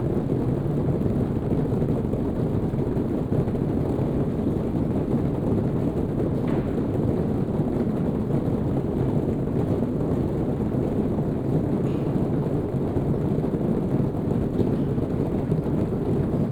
Ovada, Woodins Way, Oxford - sound installation
sound installation by Zimoun, during audiograft festival, Oxford
(Sony PCM D50, OKM2)